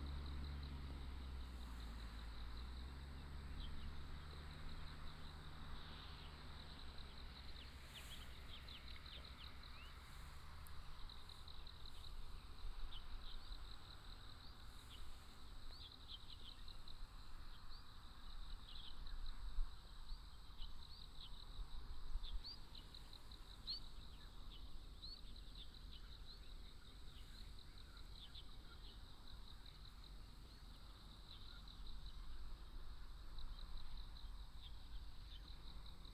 Birds singing, In the side of the road, Sound of insects, Traffic Sound
Hualien County, Taiwan, 9 October 2014, 07:10